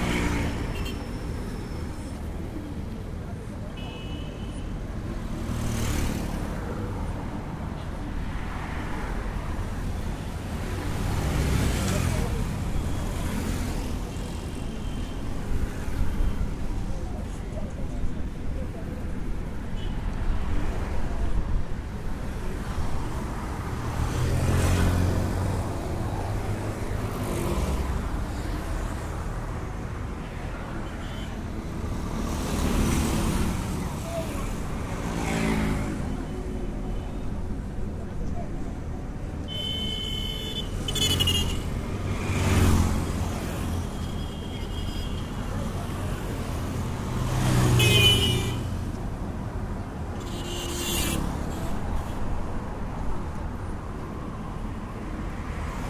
Awolowo Rd Ikoyi, Lagos - Awolowo Rd Ikoyi (LagosSoundscape)
Lagos, Nigeria, 6 May 2009